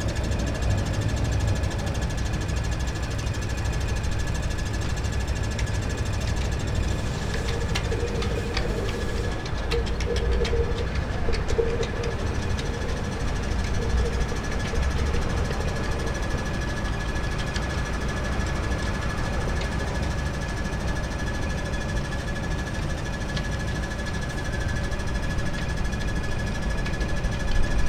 Binckhorst, The Hague, The Netherlands - walking
to painting cars !? Sennheiser mic, zoom